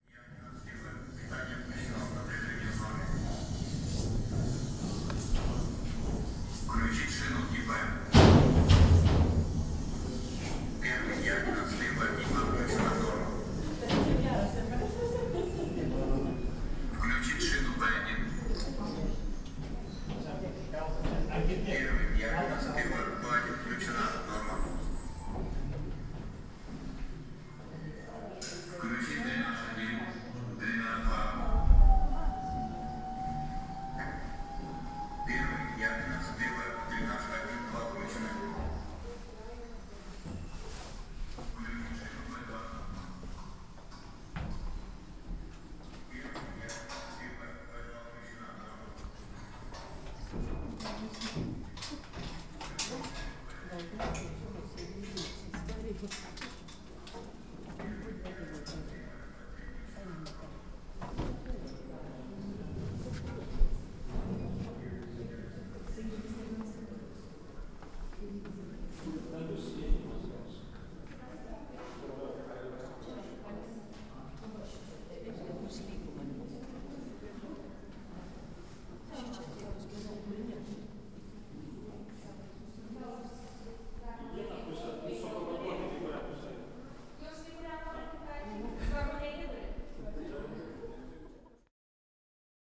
former nuclear missile silos, Lithuania
Cold War Museum. in the nuclear missile silos